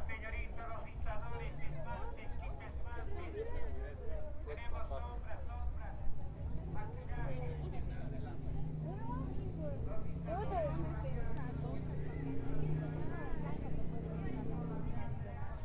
El Prado, Cochabamba, Bolivia - El Prado, Cochabamba
por Nelson Marca
23 September 2013, 10:18